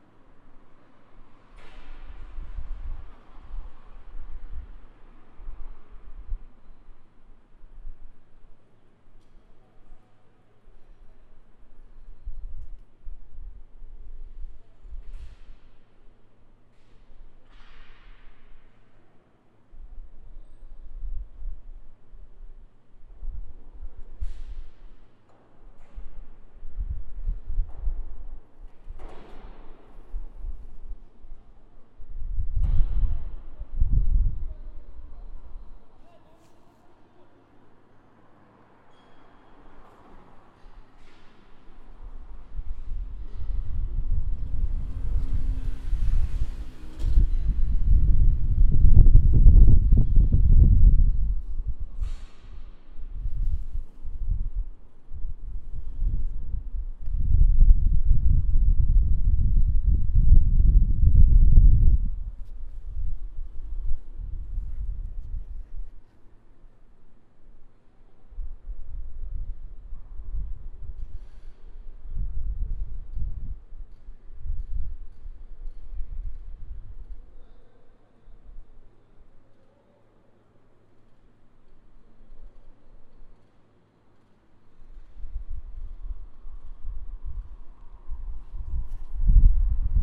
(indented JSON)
{
  "title": "Tt. Vasumweg, Amsterdam, Nederland - Wasted Sound Damen Warehouse",
  "date": "2019-10-16 16:29:00",
  "description": "The wasted sound coming from a big shipyard warehouse.",
  "latitude": "52.41",
  "longitude": "4.88",
  "altitude": "2",
  "timezone": "Europe/Amsterdam"
}